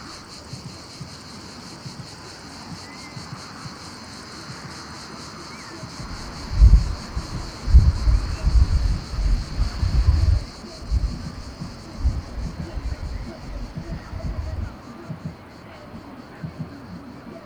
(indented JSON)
{
  "title": "Sudak, Crimea, Ukraine - Genoese Fortress - Climbing the coast healing walk - from sea to cliff",
  "date": "2015-07-12 15:30:00",
  "description": "This is a recover-hike, setting off at the beach leading straight up to the reef-lining cliffs, themselves edged by the mighty genoese fortress. as the political situation killed off the tourism drastically, you will hear us passing desparate tourist-attraction-sellers, meet locals hanging about and some other lost travellers like us. there's pebbles under our feet and the kids climb the extremely dangerous rocks which for several hundred years kept away any enemy. you follow us until the zoom recorder reaches the top, where wind and waves and the snippets of the starting nightlife -for noone- from deep down mix together into an eary cocktail.",
  "latitude": "44.84",
  "longitude": "34.96",
  "altitude": "130",
  "timezone": "Europe/Simferopol"
}